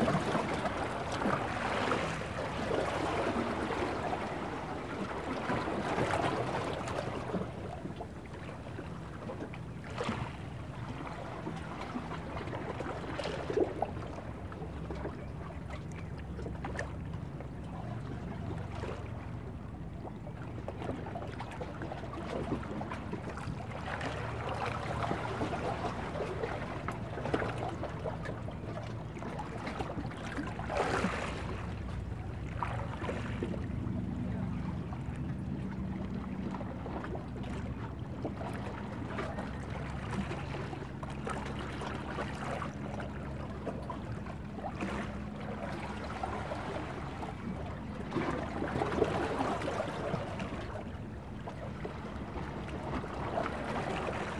Villefranche-sur-Mer, France - Villefranche sea sounds 2
Sounds of the sea from the rock jetty. Here the mics were on the rocks, so the sound from above and below are almost equal in volume and harder to tell where one ends and another begins.
(zoom H4n internal mics)